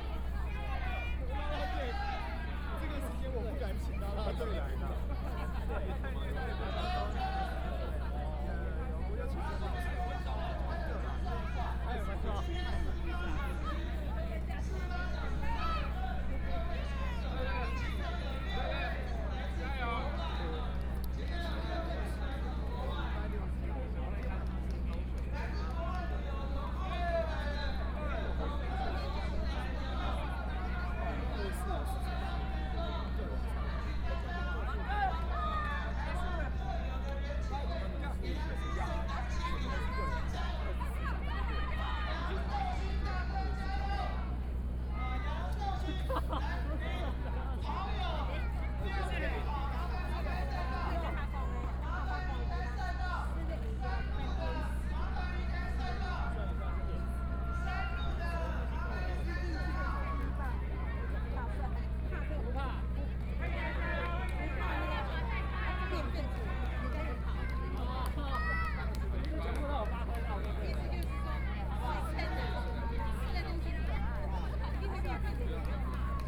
Walking through the park, Jogging game, Binaural recordings, ( Keep the volume slightly larger opening )Zoom H4n+ Soundman OKM II